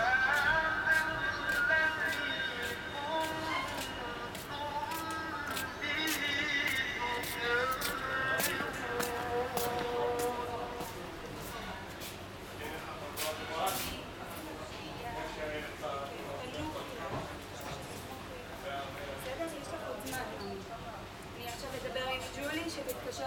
Alley, Market, Muazin, Good-morning, Arabic, Hebrew
Acre, Israel, 3 May 2018